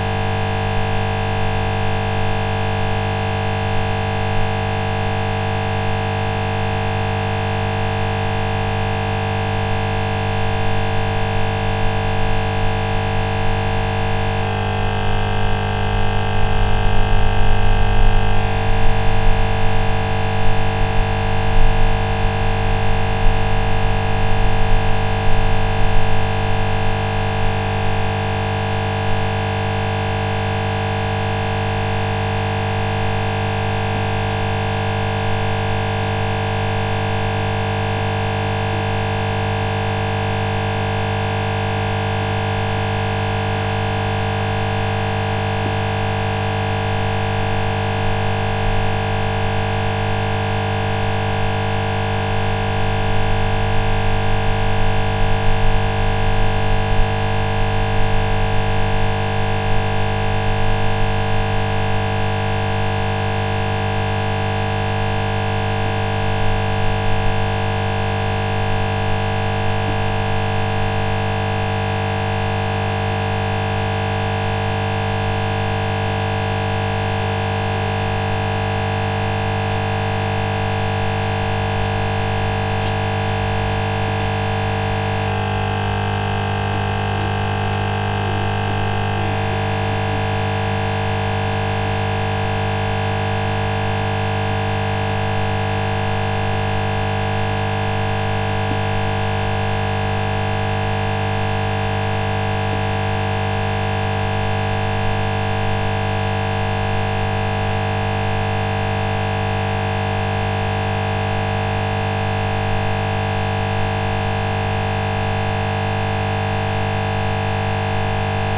Kaunas, Lithuania, near Kaunas castle - Electrical box
Very close proximity recording of an electrical power grid box. Sharp humming sounds are shifting a little bit in irregular intervals; some background noise from a nearby construction site, people walking by can be heard as well. Recorded with ZOOM H5.